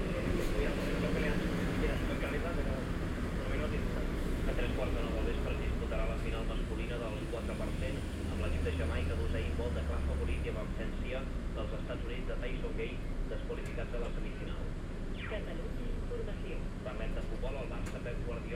Barcelona: Tram stop with radio
Barcelona, Spain